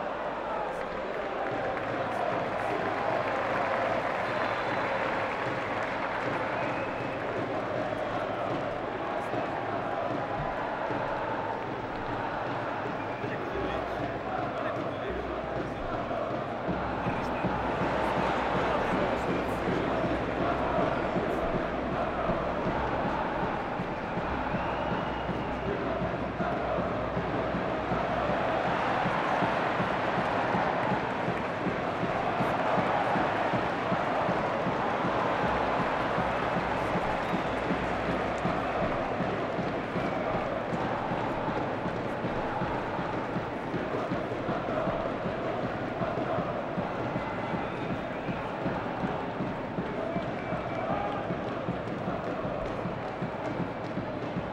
Estádio da Luz Benfica, Portugal - Stadium ambience Benfica - Vitória de Setubal
Soccer match between Sport Lisboa Benfica vs Vítória Futebol Clube
Benfica scores a goal in the final part (1'51'') of the sound.
Recorded from the press tribune
H6 Zoom recorder
XY stereo recording